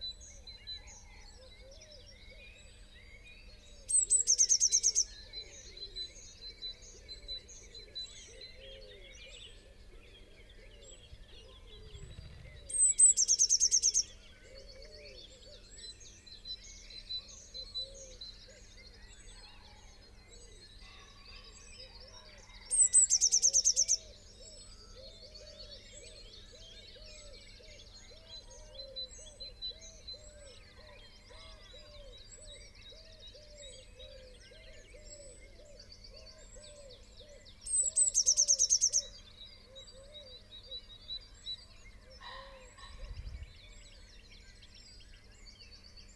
singing in a bush ... mostly wren ... song and calls from ... wren ... blue tit ... great tit ... blackbird ... robin ... pheasant ... wood pigeon ... collared dove ... crow ... tree sparrow ... lavalier mics clipped to twigs ... background noise ... traffic ... etc ...